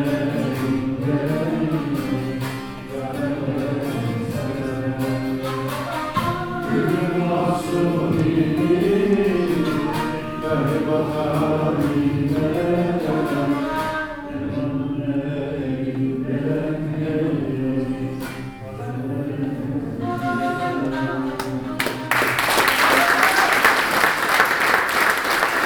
Alevitisches Kulturzentrum, Hamm, Germany - Last song...
Last song… the audience can’t help joining in…
Poems recited by Güher Karakus and Uwe Westerboer;
music by Kenan Buz and Gün Acer.
The event was part of the city’s Intercultural Weeks.